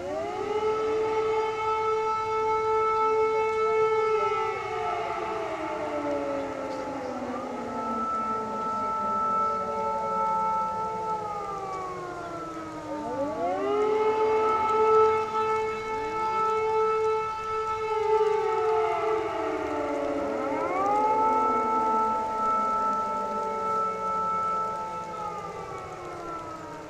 Utena, Lithuania, warning sirens
The test of the public warning and information system.
Utenos apskritis, Lietuva